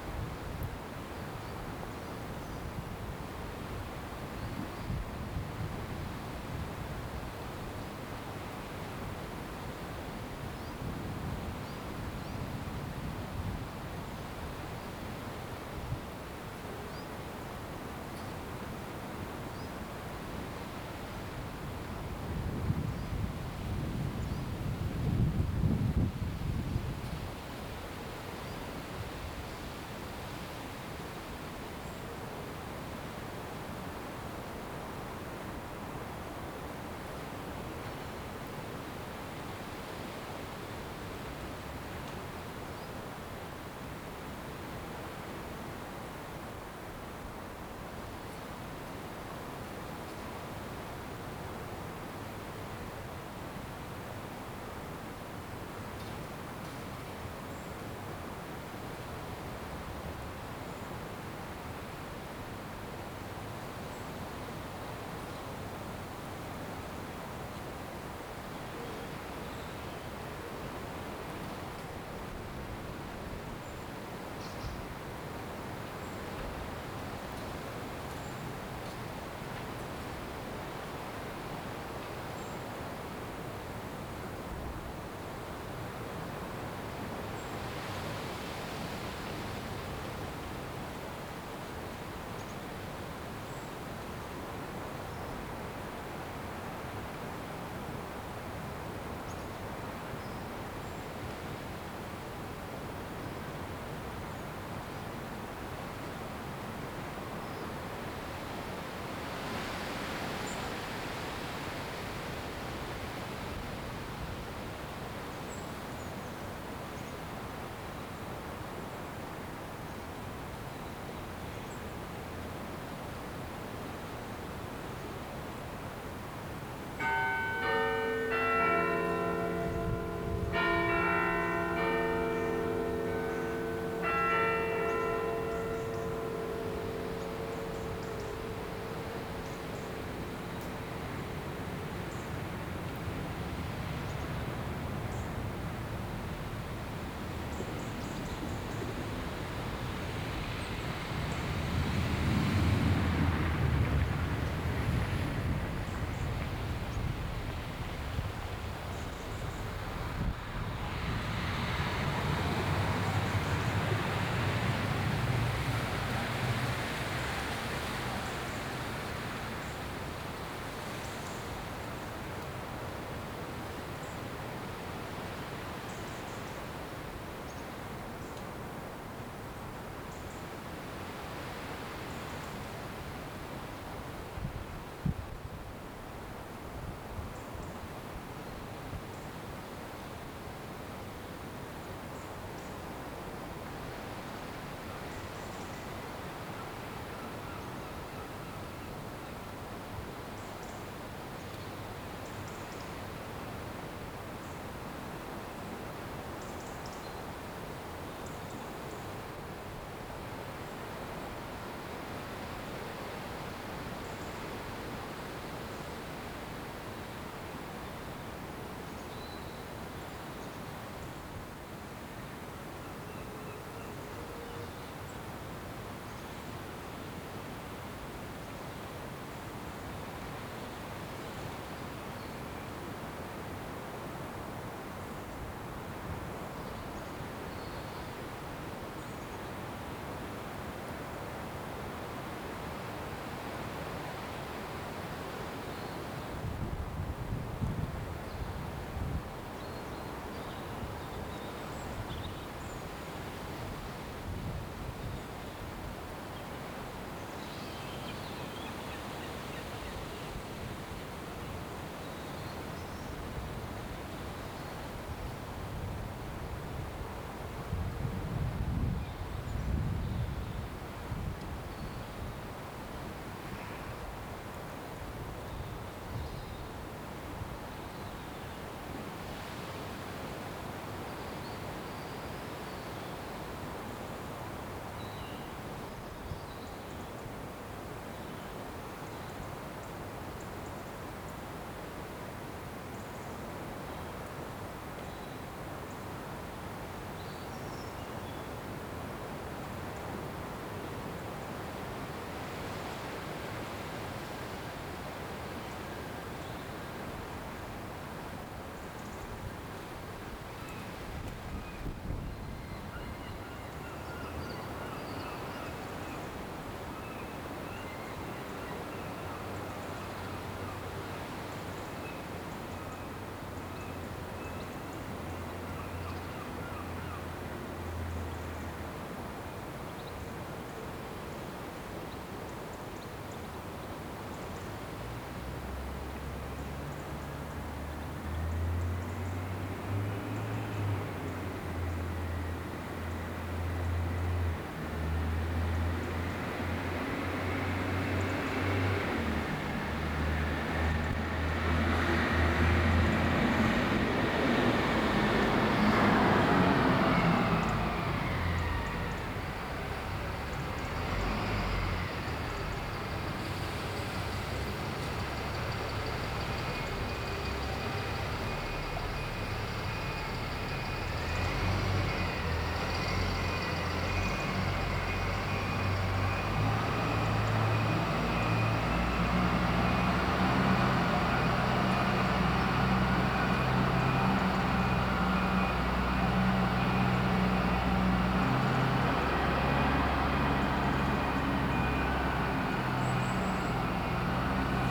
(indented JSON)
{
  "title": "Scarborough, UK - Autumn, St Mary's Church, Scarborough, UK",
  "date": "2012-10-13 06:20:00",
  "description": "Binaural field recording of St Mary's Church. A windy day.",
  "latitude": "54.29",
  "longitude": "-0.39",
  "altitude": "56",
  "timezone": "Europe/London"
}